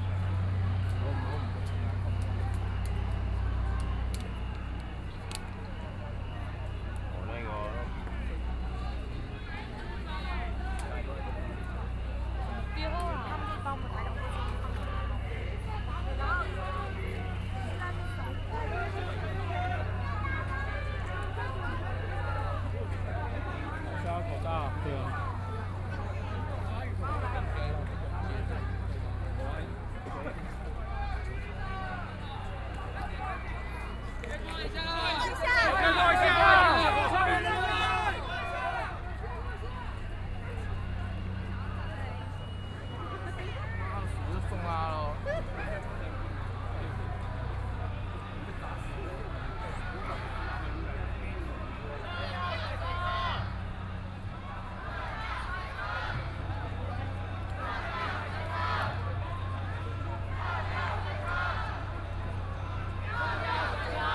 Zhongshan N. Rd., Taipei City - Outrageously day
government dispatched police to deal with students, Students sit-in protest, Students do not have any weapons, tools, Occupied Executive Yuan
Riot police in violent protests expelled students, All people with a strong jet of water rushed, Riot police used tear gas to attack people and students
Binaural recordings, Sony PCM D100 + Soundman OKM II
24 March 2014, 04:45